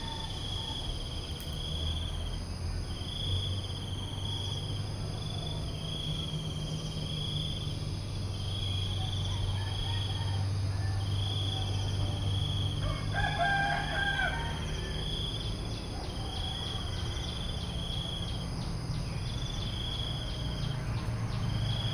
Tambon Hang Dong, Amphoe Hot, Chang Wat Chiang Mai, Thailand - Vögel Grillen Zikaden morgens Chom Thong bei Puh Anna
Crickets, cicadas and birds very early in the morning around the pond at Puh Annas guesthouse. A very soft atmosphere, slowly getting more lively.